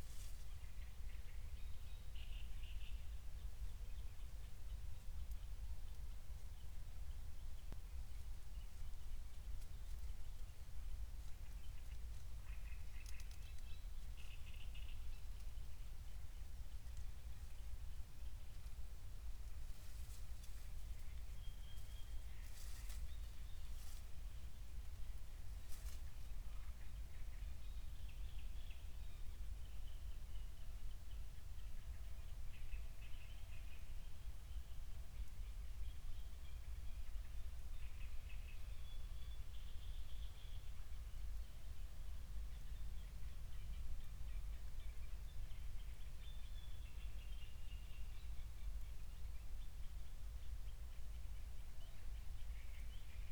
Berlin, Buch, Mittelbruch / Torfstich - wetland, nature reserve
01:00 Berlin, Buch, Mittelbruch / Torfstich 1